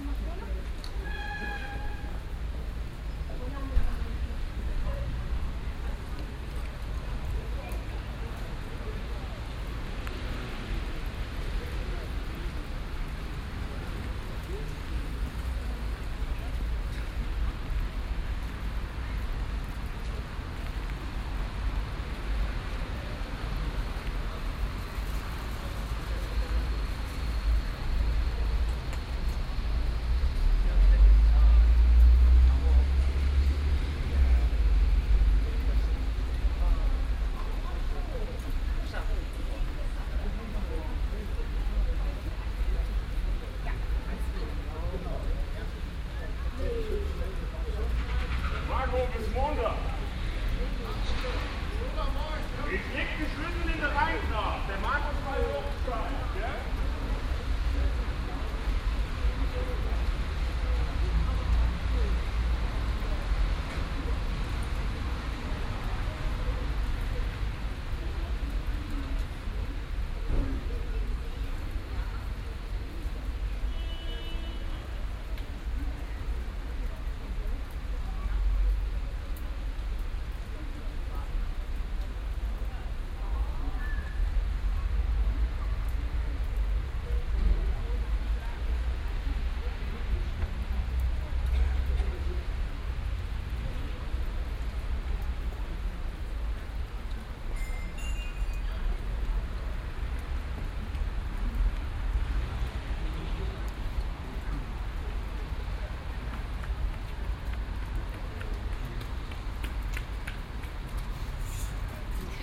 Löhrrondell, square, Koblenz, Deutschland - Löhrrondell 4
Binaural recording of the square. Fourth of several recordings to describe the square acoustically. Voices, rain, cars, honking, people shouting goodby (bis Montag), the recording is made on a friday.
Koblenz, Germany, 19 May 2017, ~15:00